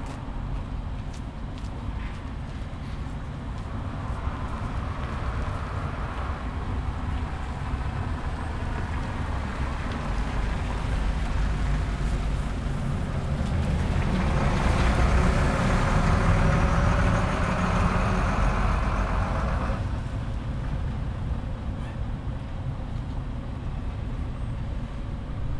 main bus station, bay 10, Poznań